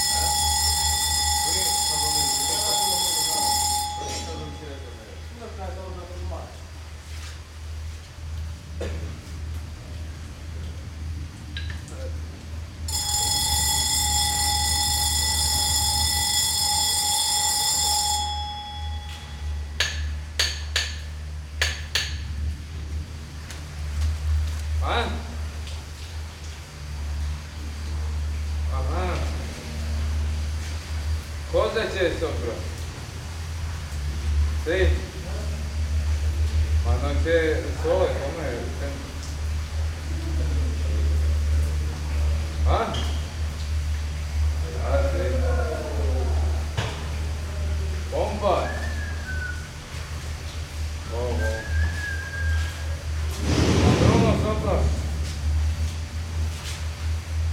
Antoniusschacht, Zürich, Schweiz - Tunnelbau S-Bahn
Zürich, Switzerland, 8 May, ~14:00